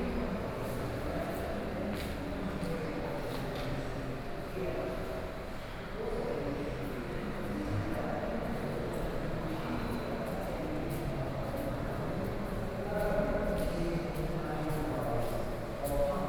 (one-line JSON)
{"title": "TAIPEI FINE ARTS MUSEUM - soundwalk", "date": "2012-09-29 14:52:00", "description": "walking in the MUSEUM, Sony PCM D50 + Soundman OKM II, Best with Headphone( SoundMap20120929- 21)", "latitude": "25.07", "longitude": "121.52", "altitude": "8", "timezone": "Asia/Taipei"}